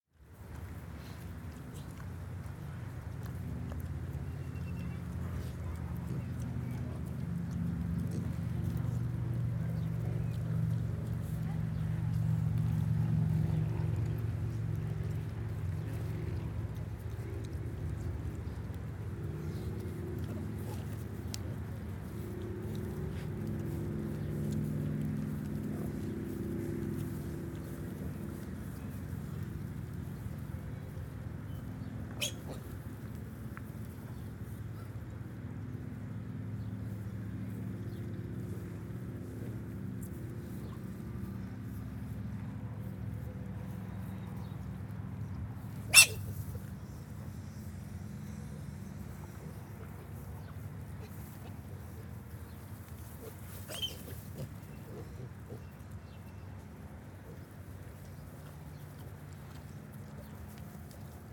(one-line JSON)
{"title": "Pig field, Amners Farm, Burghfield, UK - Berkshire sow and piglets", "date": "2017-05-06 13:28:00", "description": "This is the sound of a lovely Berkshire sow and her piglets in a field. These pigs are free range and get to snuffle about in the grass all day, but the little ones are very demanding, and constantly harassing mum for milk. When I was hanging out and listening, she didn't seem of a mind to give them any, and kept rounding on them to try and get them off her teats. The little squeals are the noises of baby pigs being shunted out of the way by their slightly grumpy mama, who just seemed to want to rootle in the mud in peace without the constant demands of the tiny piglets (who can blame her). It was amazing to hear the little squealy noises of the babies.", "latitude": "51.42", "longitude": "-1.02", "altitude": "40", "timezone": "Europe/London"}